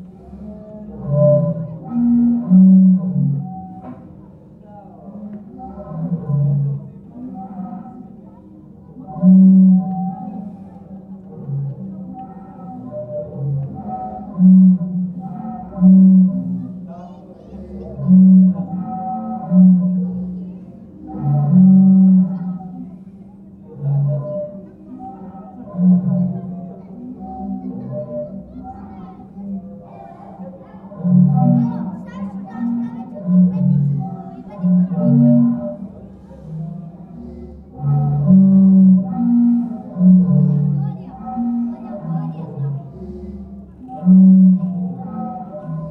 Zadar, Sea Organ
recorded manually inside the organ during a calm sea. WLD